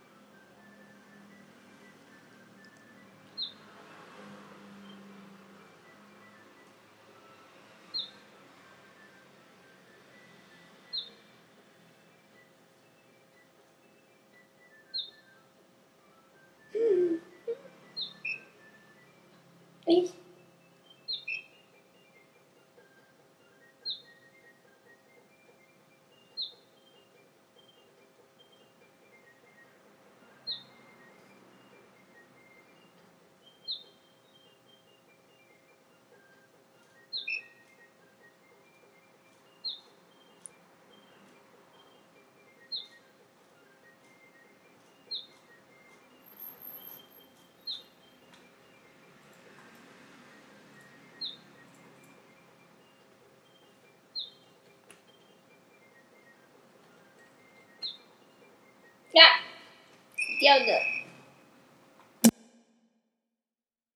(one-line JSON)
{"title": "106台灣台北市大安區通化街171巷9-1號 - Chang-Ru Yang playing iPad2", "date": "2012-10-21 00:16:00", "description": "Chang-Ru Yang was playing app game on iPad2", "latitude": "25.03", "longitude": "121.55", "altitude": "17", "timezone": "Asia/Taipei"}